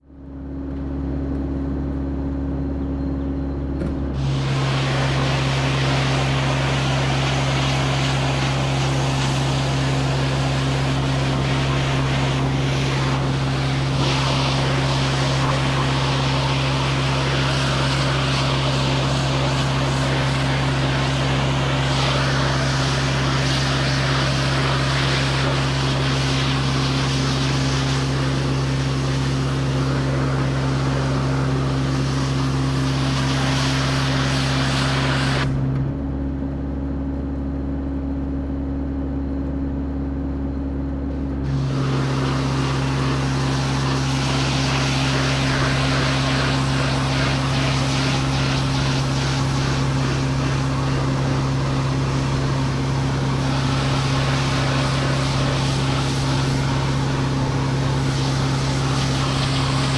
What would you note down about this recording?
workers cleaning a monument with water pressure cleaner